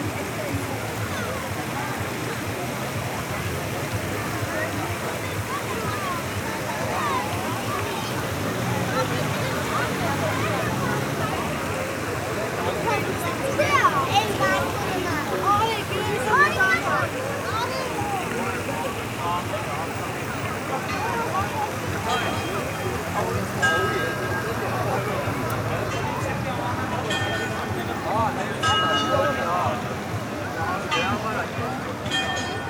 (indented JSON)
{
  "title": "Yerevan, Arménie - Republic square",
  "date": "2018-09-01 21:54:00",
  "description": "A walk along the Republic square. Since the revolution, people go out on evening and talk to each other’s. During this time, children play with the fountains, or play with strange blue light small boomerang. Euphoria is especially palpable. Happiness is everywhere, it's a pleasure.",
  "latitude": "40.18",
  "longitude": "44.51",
  "altitude": "997",
  "timezone": "GMT+1"
}